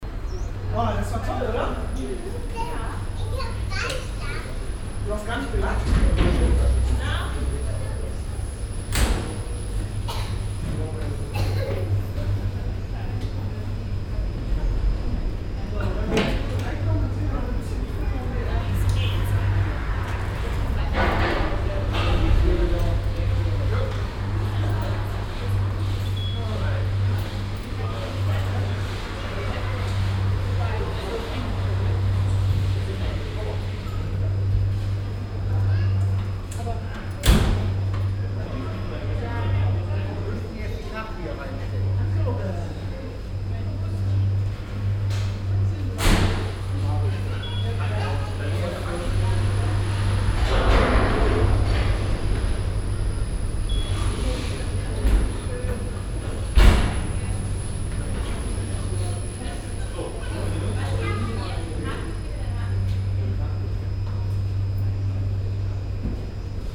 cologne, riehler str, rheinseilbahn
sonntäglicher betrieb an der kölner rheinseilbahn, stimmen, das einfahren der gondeln, türen- öffnen und schlagen, das piepen des kartenentwerters, der hochfrequentige motorenbetrieb.
soundmap nrw:
projekt :resonanzen - social ambiences/ listen to the people - in & outdoor
soundmap nrw: social ambiences, topographic field recordings